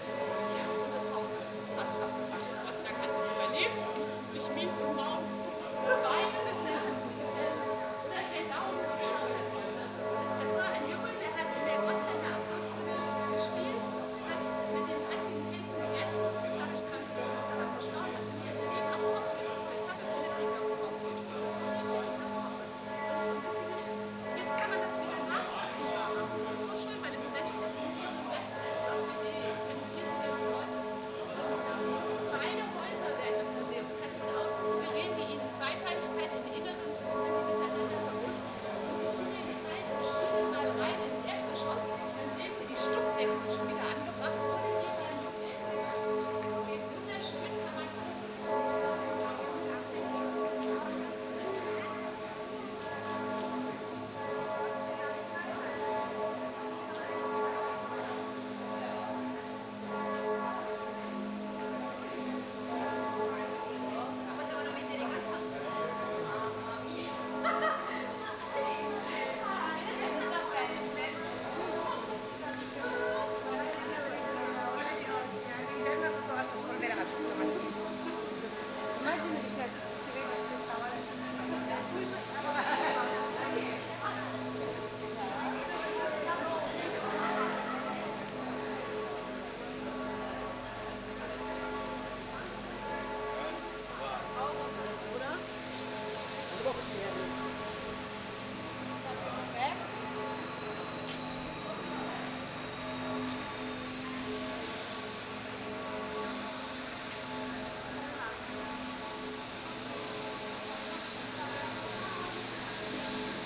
Hühnermarkt, Frankfurt am Main, Deutschland - 14th of August 2018 Teil 4
Walk through rather silent pathways, talk about the opening and that many yards will be closed then, a guide is talking about the new 'old town' as disneyland and the inhabitants of the city. Talking about the Hühnermarkt and Friedrich Stolze who reminds of Marx - another guide is talking about the Goethe-Haus, that is not original in a double sense and about Struwelpeter, the upcoming museum that reminds of this figure, that is 'coming back' to the 'old town', bells are tolling. Binaural recording.